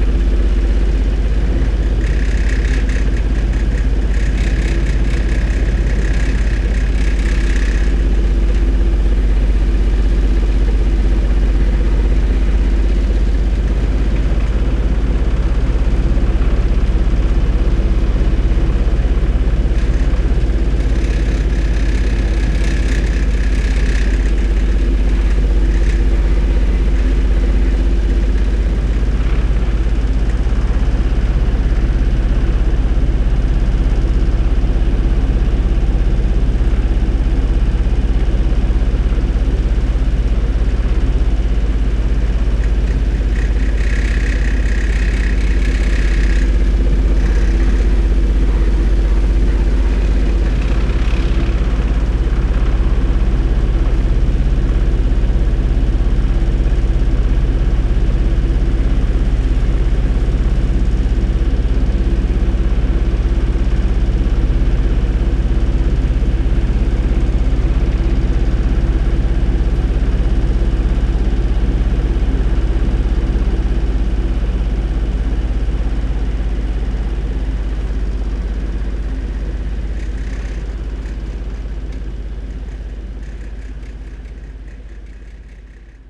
sewer works site, generator, rattling hose clamp
the city, the country & me: july 25, 2014
Berlin, Germany, 25 July 2014